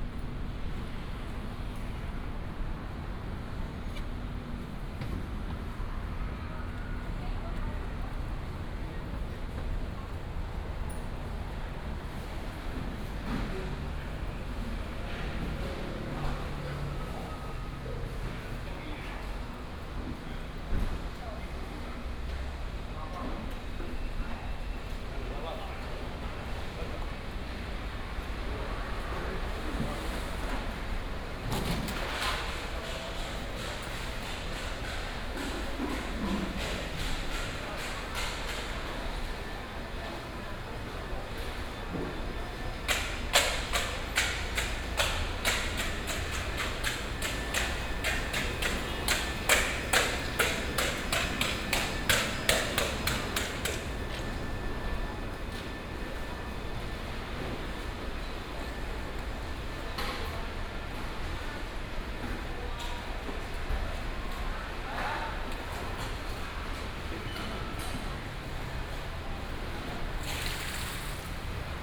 武廟市場, Lingya Dist., Kaohsiung City - seafood market
seafood market, Preparing pre-business market